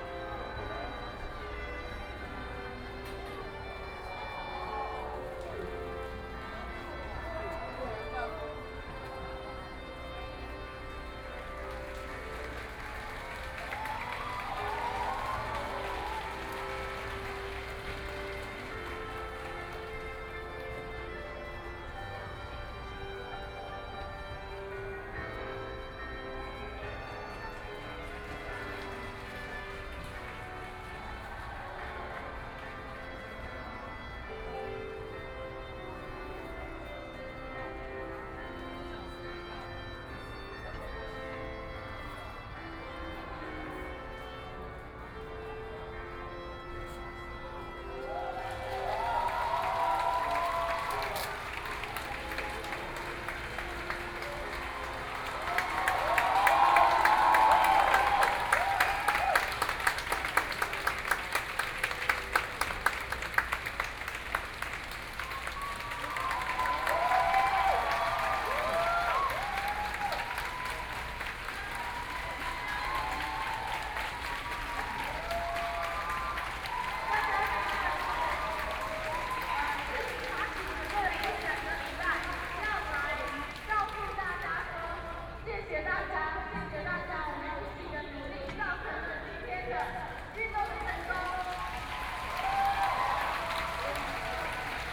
Zhenjiang St., Taipei City - occupied the Legislative Yuan
Student activism, Sit-in protest, People and students occupied the Legislative Yuan
Zhènjiāng Street, 5號3樓, 30 March 2014